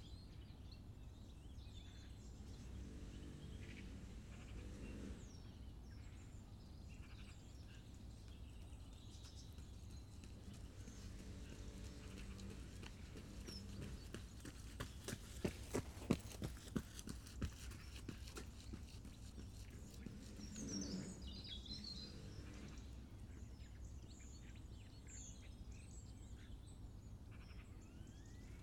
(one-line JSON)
{"title": "Southcote Junction Reading UK - Trains, people and birds.", "date": "2021-01-15 11:23:00", "description": "I set the mics on a path running beside the railway track just outside Reading. The conditions were good in that there was no wind and there were trains and people, and birds. Pluggies AB with foam add-ons into an old favourite Tascam.", "latitude": "51.44", "longitude": "-1.00", "altitude": "48", "timezone": "Europe/London"}